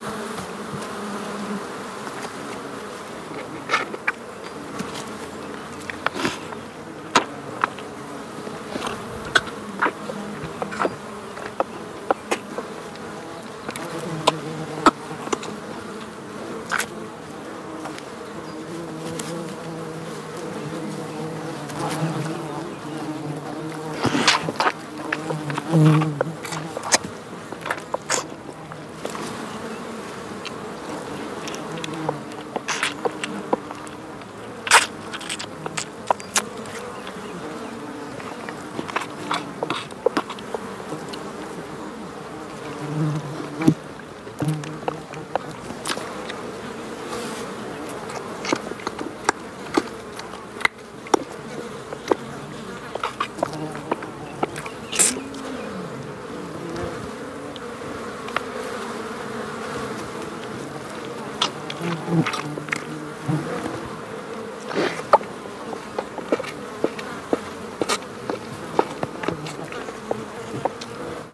Ferme de Belleprade - A young calf is born during the night, he suck his mother pie with a lot of fly around.
A young calf is born during the night, he suck his mother pie with a lot of fly around.
Recorded in a field from the 'Ferme de Belleprade' on the morning of July, 18th, 2013.
Recorded with a MS Setup (Schoeps CCM41+CCM8) and a Sound Devices 788T Recorder.